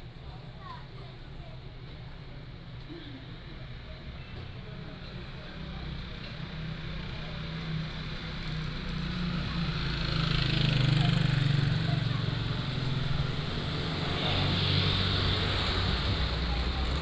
{"title": "Zhongxing Rd., Jincheng Township - Alley", "date": "2014-11-02 19:20:00", "description": "in front of the restaurant, Alley, Traffic Sound", "latitude": "24.43", "longitude": "118.32", "altitude": "16", "timezone": "Asia/Taipei"}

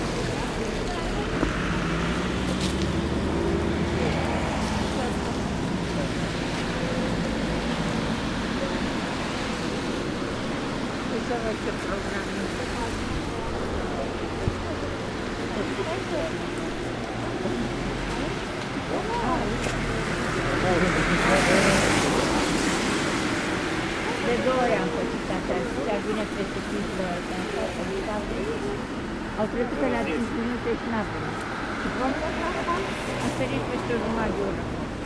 {"title": "Bulevardul 15 Noiembrie, Brașov, Romania - 2016 Christmas in Brasov - Bus Station", "date": "2020-12-25 18:22:00", "description": "The bus station is always an interesting atmosphere to listen to. Four years ago they were just installing digital timetables and some people are commenting that even if they show that the next bus will arrive in 5 minutes, they have waited even 30 minutes. It's a good example of how recorded sound can literally describe a moment in time. Recorded with Superlux S502 Stereo ORTF mic and a Zoom F8 recorder.", "latitude": "45.65", "longitude": "25.60", "altitude": "575", "timezone": "Europe/Bucharest"}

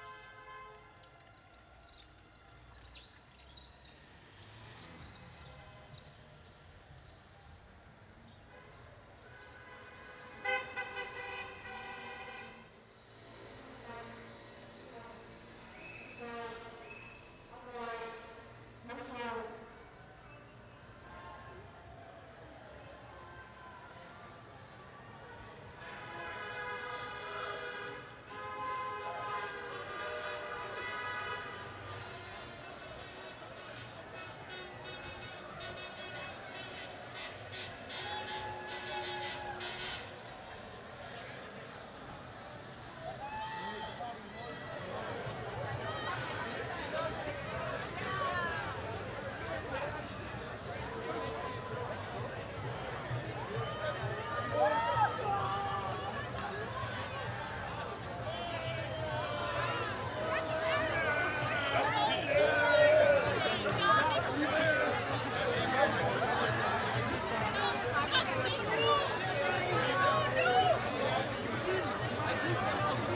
{"title": "Kortenbos, Centrum, Nederland - Soccer party downtown The Hague", "date": "2010-07-02 22:00:00", "description": "A small impression of people celebrating in downtown The Hague because the Dutch defeated the Brazilians (WC2010) on July 2nd 2010.\nZoom H2 recorder with SP-TFB-2 binaural microphones.", "latitude": "52.08", "longitude": "4.31", "altitude": "9", "timezone": "Europe/Amsterdam"}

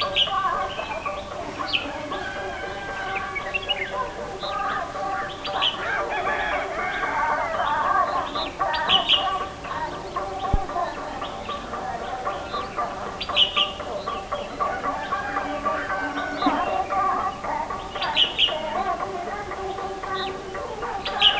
{"title": "Nullatanni, Munnar, Kerala, India - dawn in Munnar - over the valley 2", "date": "2001-11-06 06:14:00", "description": "dawn in Munnar - over the valley 2", "latitude": "10.09", "longitude": "77.06", "altitude": "1477", "timezone": "Asia/Kolkata"}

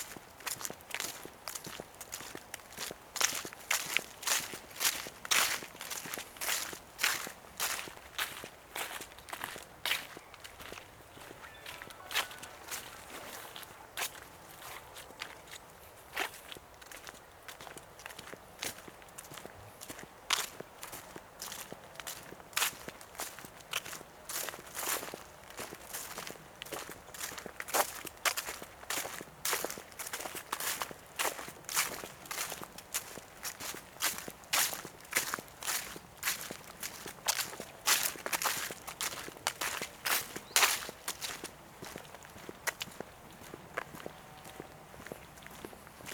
{"title": "Wet zone, Pavia, Italy - a walk through the wetzone", "date": "2012-11-01 15:38:00", "description": "Sunny and warm fist of november, walking through the wetzones after a full day of rain the day before. walk on path, then in the wood over a bed of dead leaves, crossing muddy zones and several puddles.", "latitude": "45.17", "longitude": "9.20", "altitude": "56", "timezone": "Europe/Rome"}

